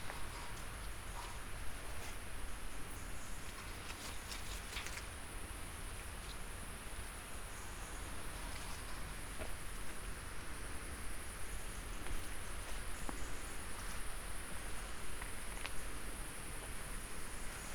Studenice, Slovenia - cemetery
quiet cemetery with iron gates, overgrown with wild ivy